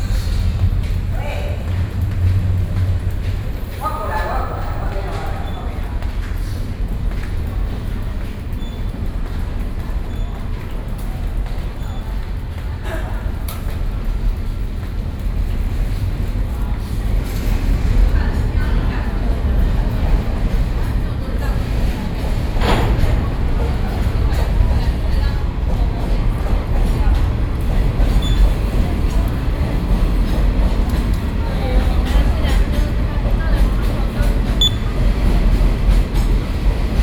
Ruifang, New Taipei City - Underpass